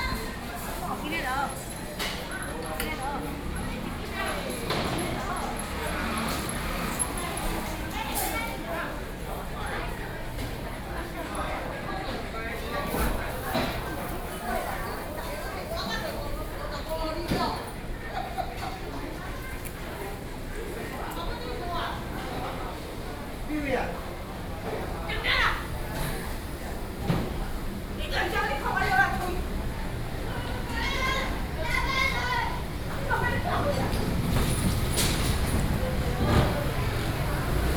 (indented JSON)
{
  "title": "Nanshijiao, New Taipei City - soundwalk",
  "date": "2012-09-29 14:09:00",
  "description": "walking in the Traditional Market, Waiting for the train, Sony PCM D50 + Soundman OKM II",
  "latitude": "24.99",
  "longitude": "121.51",
  "altitude": "15",
  "timezone": "Asia/Taipei"
}